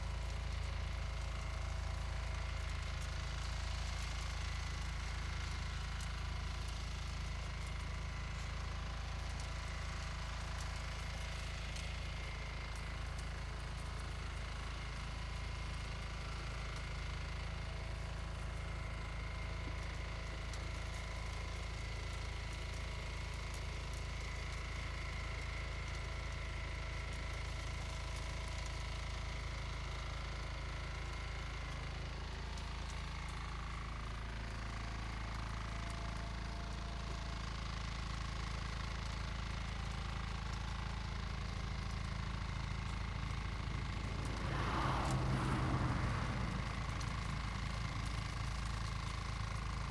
白坑村, Huxi Township - Agricultural machines
Next to farmland, Small village, Agricultural machines
Zoom H2n MS+XY